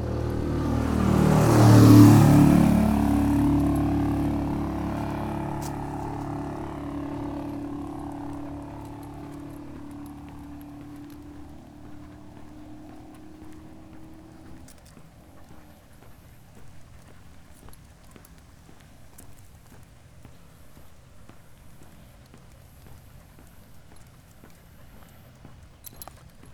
R. do Monte da Poça, Portugal - sound walking the dog